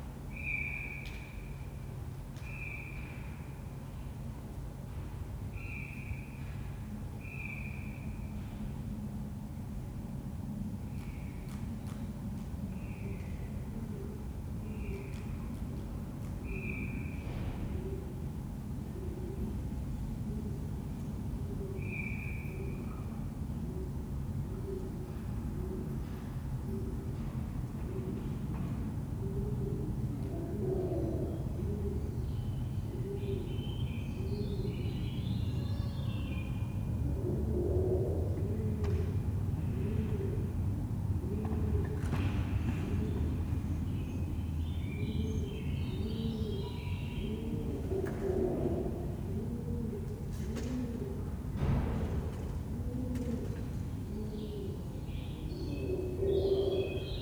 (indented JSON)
{
  "title": "Hiddenseer Str., Berlin, Germany - The first (fake) cuckoo of spring - from my 3rd floor window 8 days into Covid-19 restrictions",
  "date": "2020-03-28 09:52:00",
  "description": "Imagine my surprise to hear a cuckoo 'cuckoo-ing' in the Hinterhof. Definitely a first, so I rushed to record through the window. The cuckoo-ing was quickly followed by a female cuckoo 'bubbling', then a peregrine falcon, then a blackcap warbling and other species - a very welcome explosion of bio-diversity in under a minute. Was very pleased to find that someone had taken to playing bird song tracks from their own open window - not loud, but pleasantly clear. Quite different from the normal TVs and music. It's a great idea but I don't know who is doing it as yet. This part of Berlin has had none of the coordinated clapping or bell ringing in response to Covid-19 as described by others. But these short, one-off, spontaneous sonic gestures are totally unexpected and very nice. Fingers crossed for more. Perhaps the beginnings of a new sonic art form. Interesting to hear that the real birds (pigeons) just carried on as normal, completely un-worried by the new sonic arrivals.",
  "latitude": "52.54",
  "longitude": "13.42",
  "altitude": "60",
  "timezone": "Europe/Berlin"
}